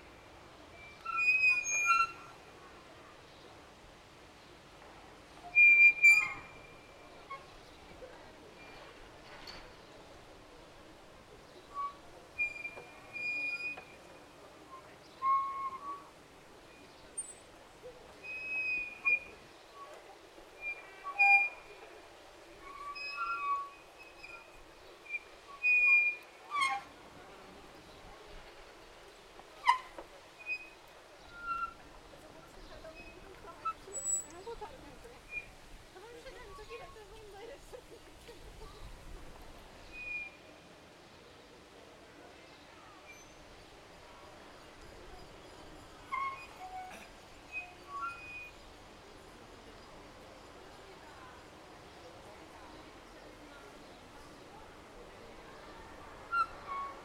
Masts of flags singing iwith a small brise on a hot sunday @ Pavilhão Multi-usos, Oriente, Lisboa. Recorded with a zoom H5 internal mics (XY stereo 90°).
24 May 2020, ~19:00